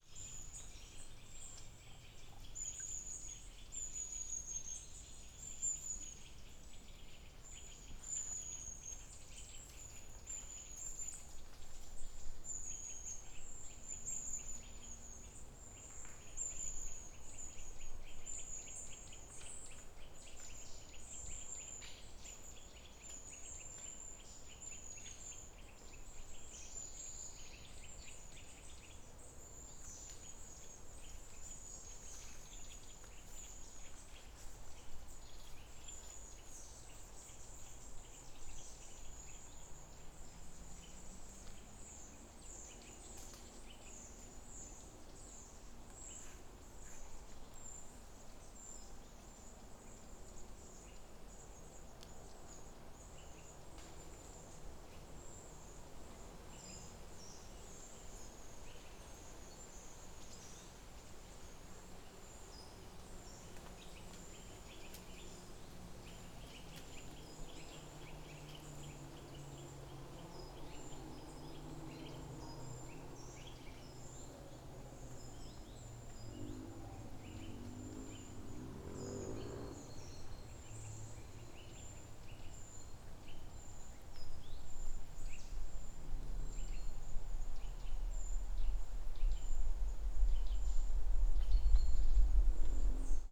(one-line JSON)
{"title": "a biking route near Biedrusko - forest ambience", "date": "2012-09-02 13:43:00", "description": "very rich, playful, intricate forest ambience, yet not possible to enjoy due to distant motorbikes...", "latitude": "52.53", "longitude": "16.95", "altitude": "69", "timezone": "Europe/Warsaw"}